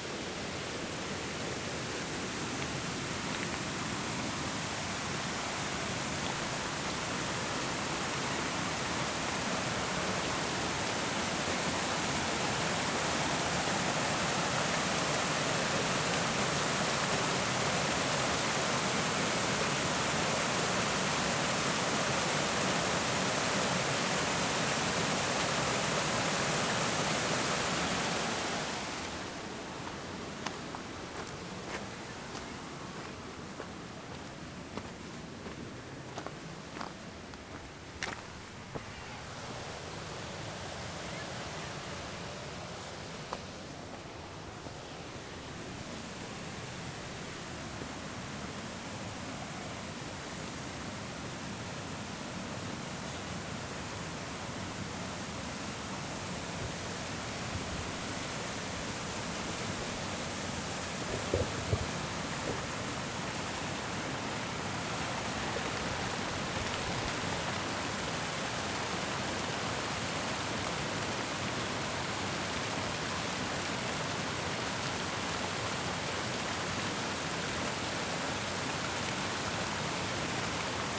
{"title": "Natural Dam - Walking around Natural Dam", "date": "2022-04-12 11:48:00", "description": "Walking around the Natural Dam Falls", "latitude": "35.65", "longitude": "-94.40", "altitude": "204", "timezone": "America/Chicago"}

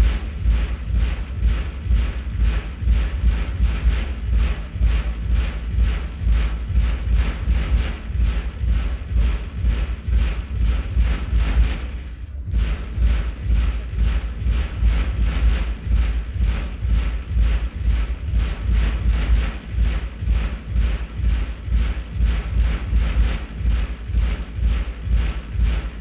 {"title": "DasWerkWien - 4 a.m. outside the club", "date": "2016-07-12 03:56:00", "description": "resonating metalplate outside a technoclub. recorded with 2 omni mics in olson wing array and sd302 mixer.", "latitude": "48.23", "longitude": "16.36", "altitude": "166", "timezone": "Europe/Vienna"}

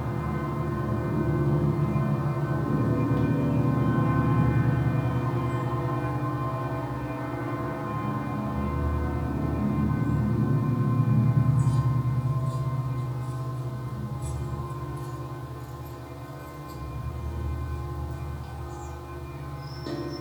{"title": "Experimental Microphone, Malvern, Worcestershire, UK - Plate Mic", "date": "2018-04-02 16:55:00", "description": "I attached a cheap piezo contact mic to a 2mm thick plate 300mm square and hung it in the wind.\nMixPre 3", "latitude": "52.08", "longitude": "-2.33", "altitude": "125", "timezone": "Europe/London"}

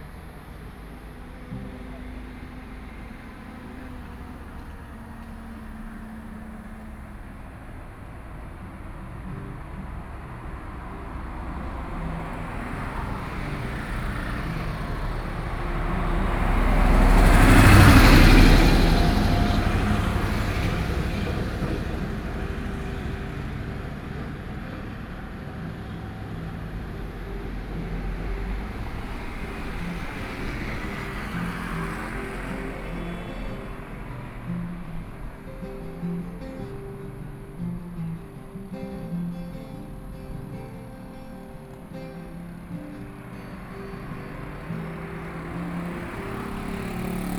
{"title": "都蘭糖廠, Donghe Township - At the roadside", "date": "2014-09-06 18:32:00", "description": "At the roadside, Traffic Sound, Small village", "latitude": "22.87", "longitude": "121.23", "altitude": "47", "timezone": "Asia/Taipei"}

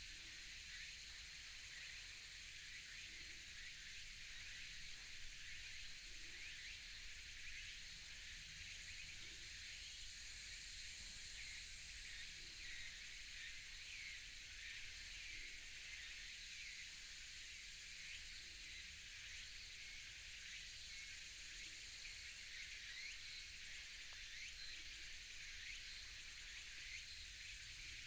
{"title": "竹25鄉道, Qionglin Township - Evening mountain", "date": "2017-09-15 17:07:00", "description": "sound of birds, Insects sound, Evening mountain, Binaural recordings, Sony PCM D100+ Soundman OKM II", "latitude": "24.75", "longitude": "121.15", "altitude": "337", "timezone": "Asia/Taipei"}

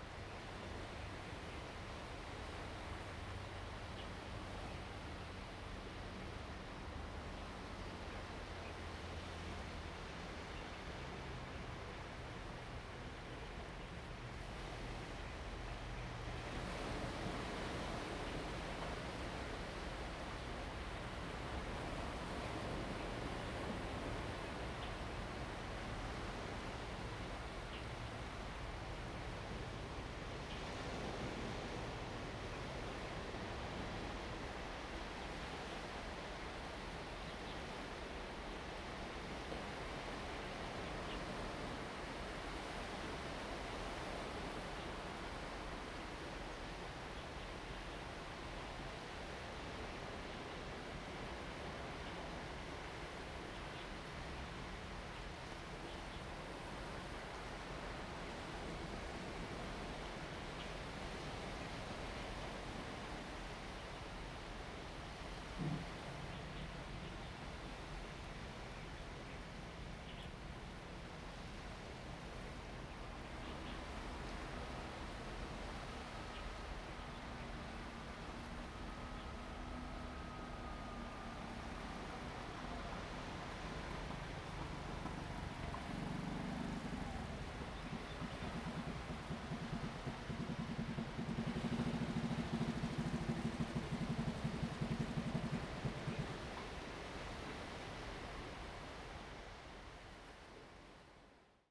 {"title": "杉福漁港, Liuqiu Township - On the bank", "date": "2014-11-02 07:52:00", "description": "On the bank, Sound of the waves, Birds singing\nZoom H2n MS+XY", "latitude": "22.34", "longitude": "120.36", "altitude": "6", "timezone": "Asia/Taipei"}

